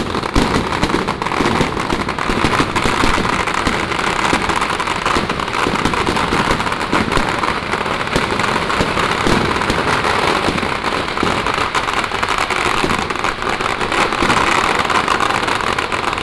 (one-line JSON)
{"title": "Dabu, Baozhong Township - Firecrackers and fireworks", "date": "2017-03-01 15:26:00", "description": "Firecrackers and fireworks, sound of birds, Helicopter, Traffic sound", "latitude": "23.70", "longitude": "120.32", "altitude": "13", "timezone": "Asia/Taipei"}